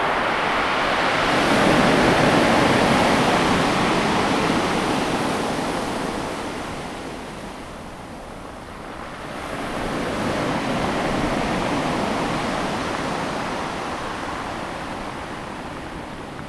At the beach, Sound of the waves, Near the waves
Zoom H2n MS+XY
Taimali Township, 台9線28號, 12 April, 17:27